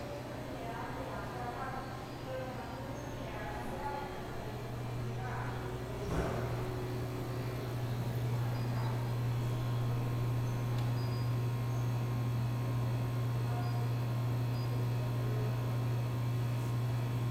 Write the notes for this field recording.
Descripción, Sonido tónico: Lobby bloque 11, Señal sonora: Maquinas dispensadoras, Grabado por Santiago Londoño Y Felipe San Martín